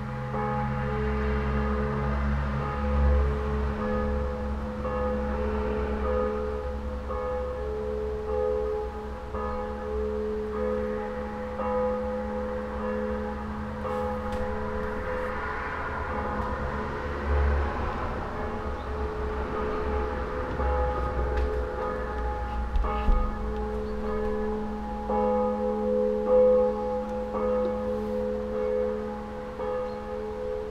reichwalde, robert koch str, country camp for school pupils, morning bells
early in the mornig, the bells of the nearby church
soundmap d - social ambiences & topographic field recordings